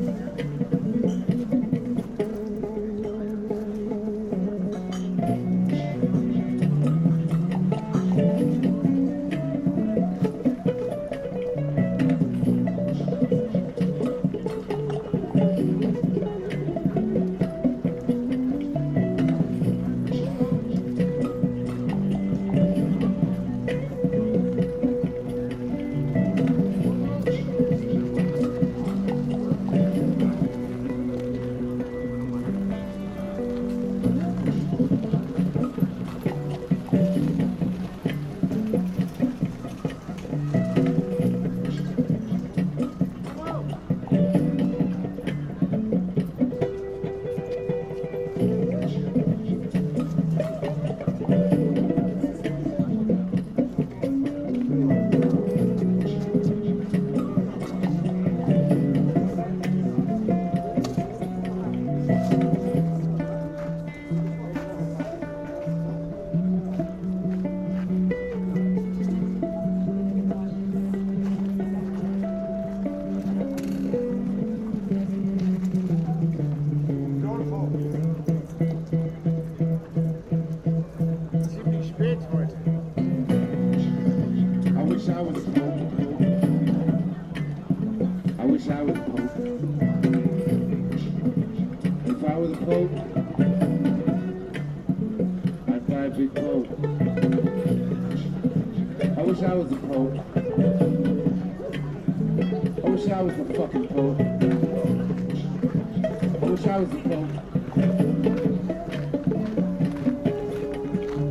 Görlitzer Park
Great Artist in Gorlitzer Park, playinig a Bouzuki, Kalyuka, Jaw Harp, Microphone and Loop Station. Joining Mr. US aKa Mr. Youth. "What would you do if you were the Pope?"
Berlin, Germany, 26 September, 19:10